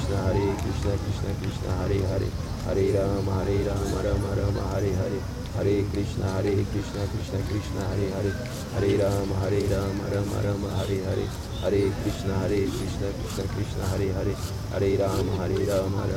Aclimação, São Paulo - State of São Paulo, Brésil - Krishna Believers
In the park Aclimaçao in Sao Paulo, two people are meditating for Krishna.
People are walking around, the city is in background.
Recorded by a Binaural Setup with 2 x Sanken COS11D on a Handy Recorder Zoom H1
Sound Reference: 170213ZOOM0009
- Cambuci, São Paulo - SP, Brazil, 13 February 2017, 18:00